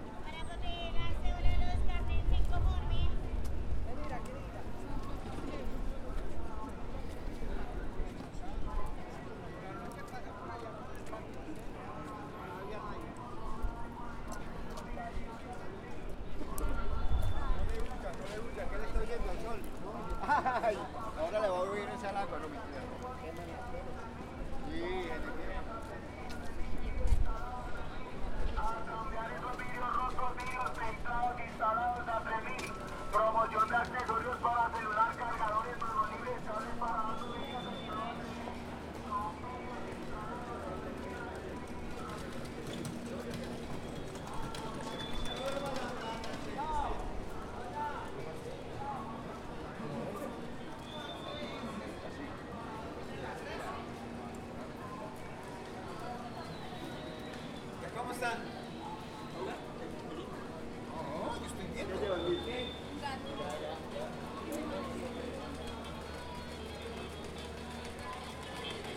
Centro de Bogotá, Desde carrera 7 con calle 16 hasta la zona de libreros y vendedores ambulantes, carrera 9 con calle 16 un miercoles a las 11:30 am, Grabadora Tascam DR-40.
Bogotá, Colombia, 27 June 2018